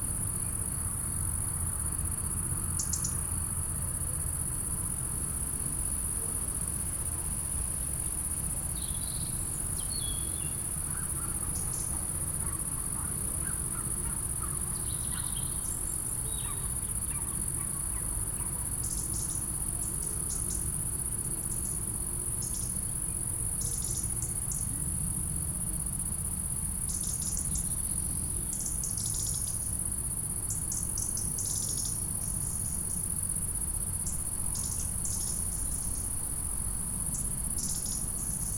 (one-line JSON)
{"title": "chemin du golf, Viviers-du-Lac, France - ambiance du soir", "date": "2022-06-17 22:00:00", "description": "Chemin du Golf stridulations d'insectes cliquetis de rouges_gorges das la pénombre. Circulation en arrière plan, léger vent de nord.", "latitude": "45.66", "longitude": "5.90", "altitude": "275", "timezone": "Europe/Paris"}